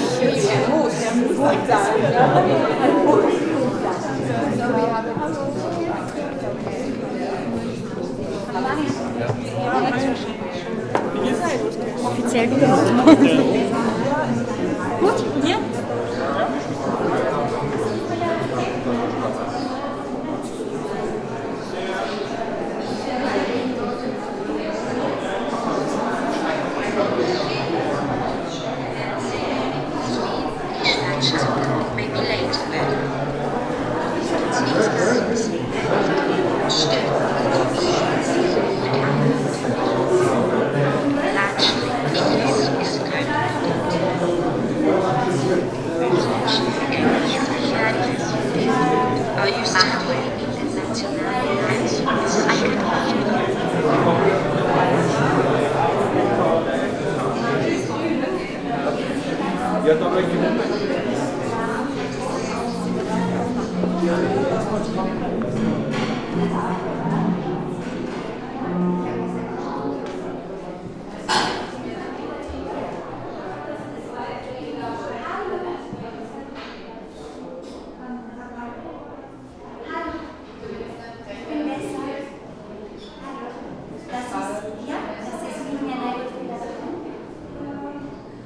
Kulturzentrum bei den Minoriten, Graz - voices
exhibition opening, shifting constellations